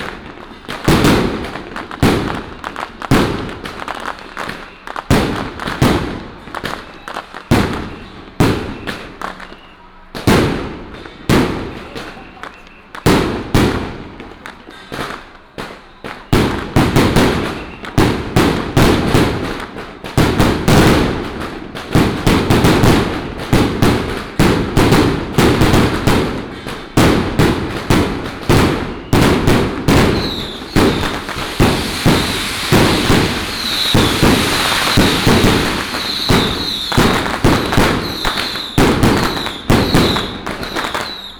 Matsu Pilgrimage Procession, Crowded crowd, Fireworks and firecrackers sound